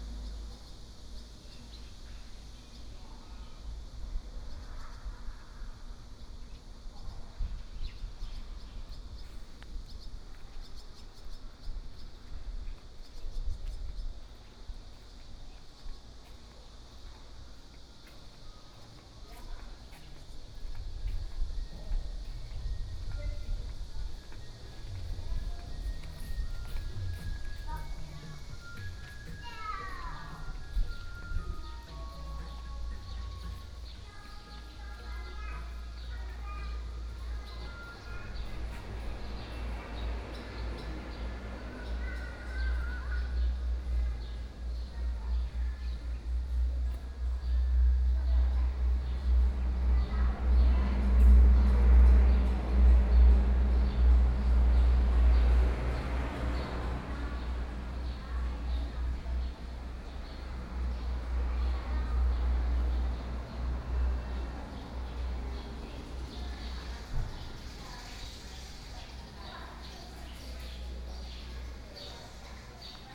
保安宮, 壯圍鄉過嶺村 - walking in the temple
In the temple, Traffic Sound, Birdsong sound, Small village
Sony PCM D50+ Soundman OKM II
Yilan County, Taiwan, 26 July 2014